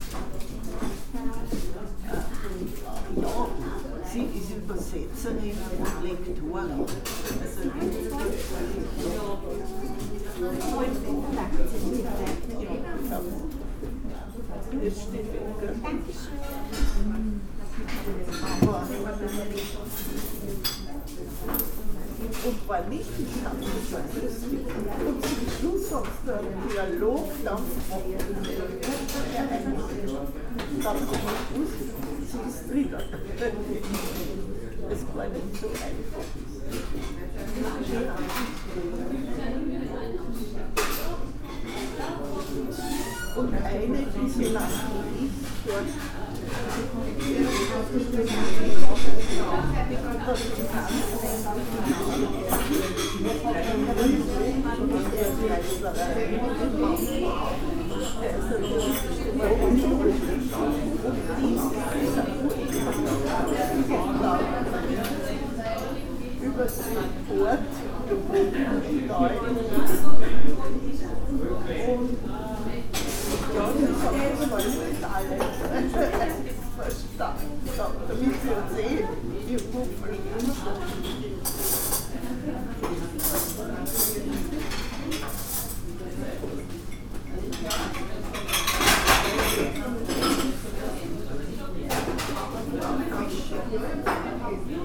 Alt-Urfahr, Linz, Österreich - konditorei jindrak
konditorei jindrak, Hauptort. 35, 4040 linz
Linz, Austria, 18 January 2015, 17:17